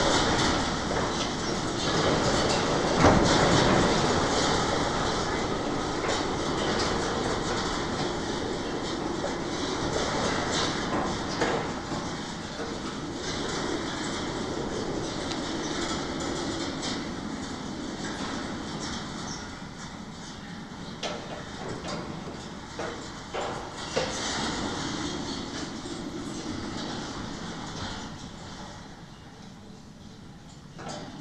Hermankova ulica, Maribor, Slovenia - fence with vines and wind 2
this stretch, connected perpendicularly to the previous, had no vines directly attached, allowing the wind sounds to be clearer, and the adding the 'reverb' of distance to the percussive sounds coming through from the other stretch. both recordings made with contact mics.